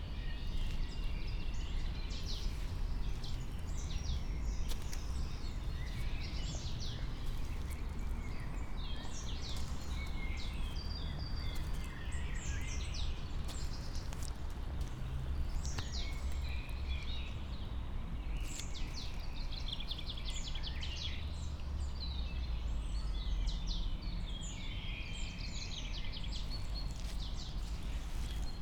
Mariborski otok, river Drava, tiny sand bay under old trees - tree branches descend, touching water surface
just after rain has stopped, slow walk